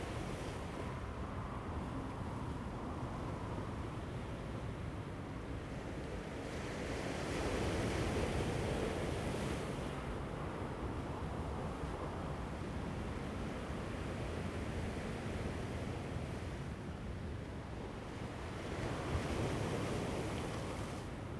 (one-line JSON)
{"title": "Jilin Rd., Taitung City - Standing on the embankment", "date": "2014-09-06 08:48:00", "description": "Standing on the embankment, Waves, Fighter, Traffic Sound, The weather is very hot\nZoom H2n MS+XY", "latitude": "22.79", "longitude": "121.18", "altitude": "9", "timezone": "Asia/Taipei"}